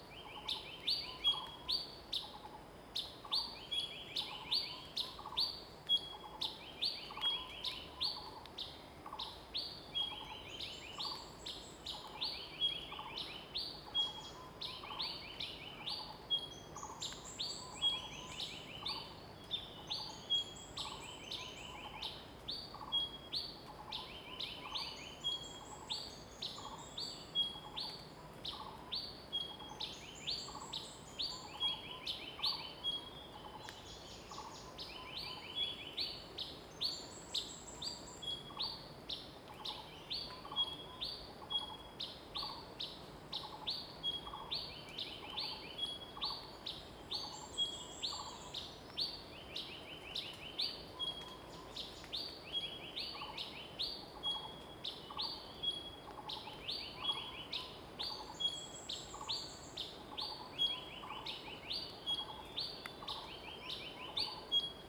水上, TaoMi Li, Puli Township - Birds singing
Birds singing, face the woods
Zoom H2n MS+ XY